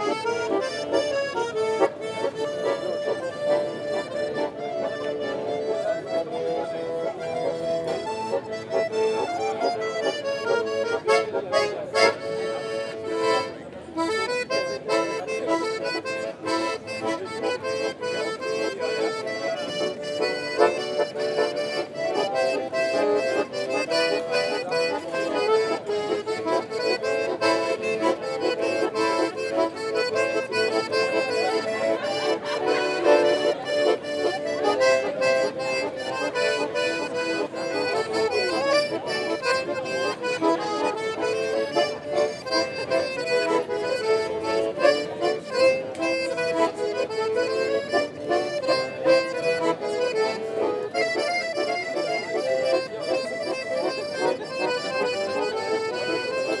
Bruxelles, Belgium - Sainte-Catherine district
The very lively area of the Sainte-Catherine district in Brussels. In first, gypsies playing accordion near the restaurant terraces. After, the Nordzee / Mer du Nord restaurant, where a lot of people eat mussels and white wine. There's so much people that the salespersons shout and call the clients. This day everybody is happy here !